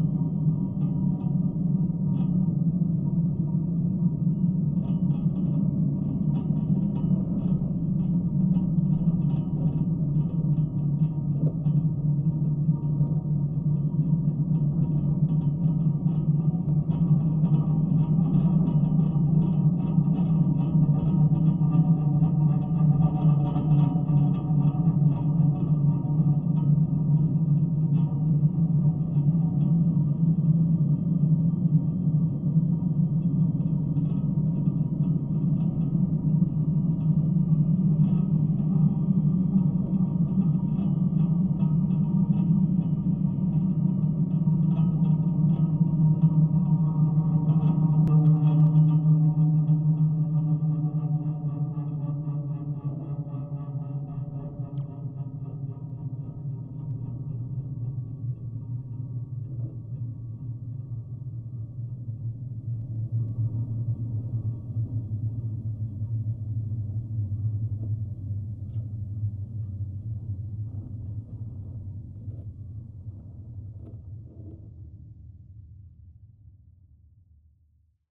Florac, France - Antenna drones
This is a very big antenna, supported by cables. This is the invisible drone sounds of the wind, recorded with contact microphones.
Used : Audiatalia contact miscrophones used mono on a cable.